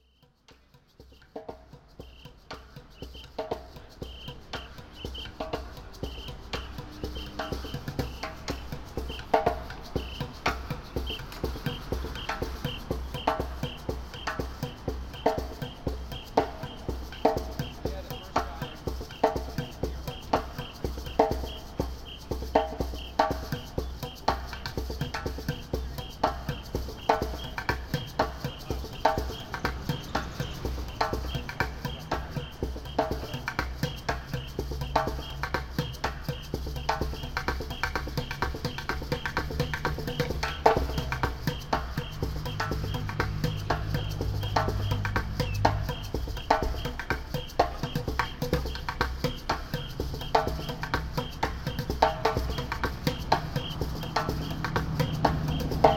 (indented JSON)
{
  "title": "Wholesale District, Indianapolis, IN, USA - Indy Street Percussionist",
  "date": "2015-04-29 21:33:00",
  "description": "Binaural recording of street performer playing percussion in downtown Indianapolis. April 29, 2015\nSony PCM-M10, MM BSM-8, Audacity (normalized and fades)",
  "latitude": "39.77",
  "longitude": "-86.16",
  "altitude": "236",
  "timezone": "America/Indiana/Indianapolis"
}